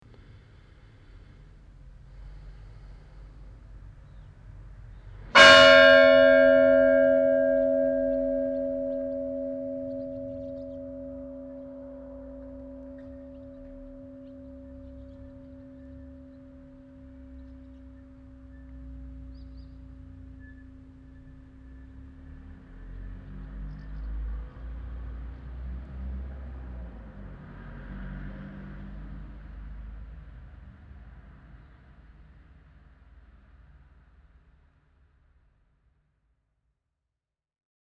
{
  "title": "Bettel, Tandel, Luxemburg - Bettel, church, bell",
  "date": "2012-08-07 13:00:00",
  "description": "An der Ortskirche in Bettel. Der Klang der 1 Uhr Glocke.\nAt the church of the village Bettel. The sound of the one o clock bell.",
  "latitude": "49.92",
  "longitude": "6.23",
  "altitude": "211",
  "timezone": "Europe/Luxembourg"
}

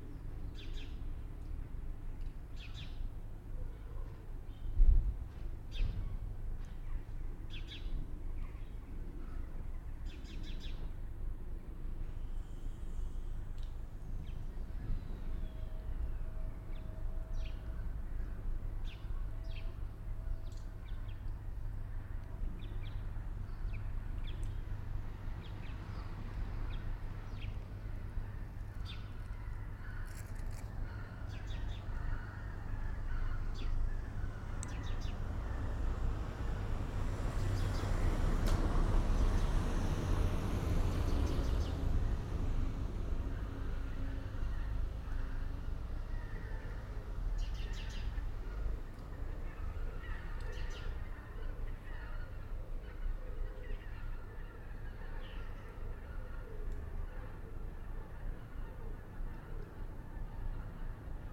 {
  "title": "Bovenstraat, Bronkhorst, Netherlands - Bronkhorst Centrum",
  "date": "2021-01-08 15:08:00",
  "description": "Quiet in a normally very busy village. Geese and traffic, boats in background. Soundfield Microphone, Stereo decode.",
  "latitude": "52.08",
  "longitude": "6.18",
  "altitude": "11",
  "timezone": "Europe/Amsterdam"
}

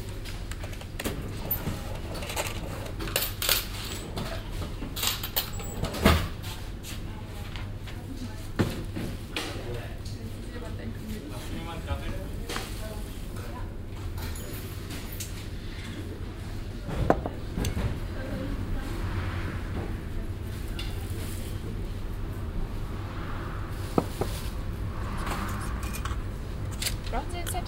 {
  "title": "cologne, venloerstrasse, biosupermarkt, morgens - koeln, venloerstr, biosupermarkt, morgens, gespräch",
  "description": "soundmap: köln/ nrw\nan der bäckereitheke, kaffeezubereitung, kassiererin, einkaufswagen, kundengespräch im hintergrund strassenverkehr\nproject: social ambiences/ listen to the people - in & outdoor nearfield recordings",
  "latitude": "50.94",
  "longitude": "6.93",
  "altitude": "51",
  "timezone": "GMT+1"
}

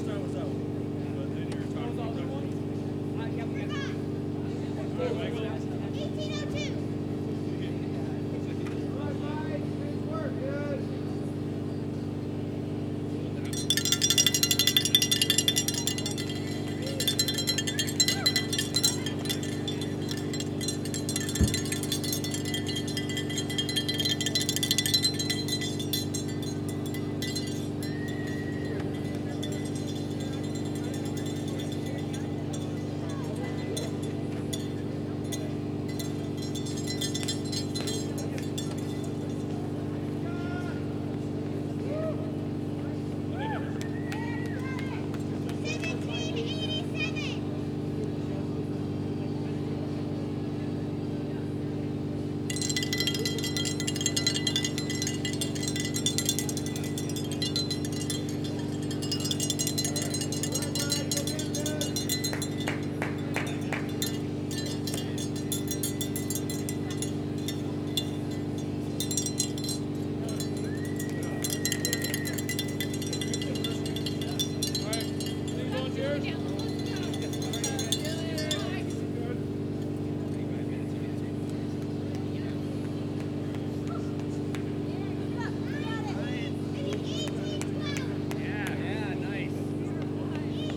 {
  "title": "Zumbro River Bottoms - Zumbro Ultra Marathon",
  "date": "2022-04-09 13:32:00",
  "description": "Sounds of Aid Station at the Zumbro Ultra Marathon. The Zumbro Ultra Marathon is a 100 mile, 50 mile, 34 mile, and 17 mile trail race held every year at the Zumbro River Bottoms Management area.\nRecorded with a Zoom H5",
  "latitude": "44.30",
  "longitude": "-92.12",
  "altitude": "227",
  "timezone": "America/Chicago"
}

